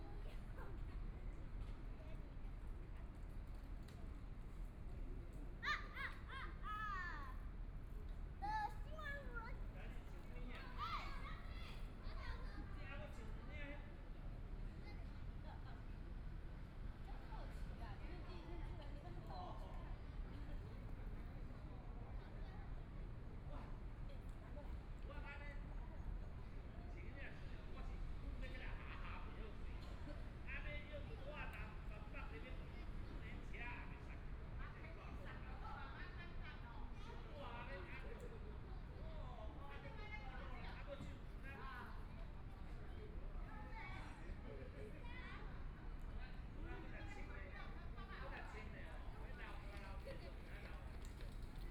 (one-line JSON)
{"title": "XinXi Park, Taipei City - in the Park", "date": "2014-02-15 17:32:00", "description": "Sitting in the park, Traffic Sound, Kids playing games in the park, Binaural recordings, Zoom H4n+ Soundman OKM II", "latitude": "25.07", "longitude": "121.53", "timezone": "Asia/Taipei"}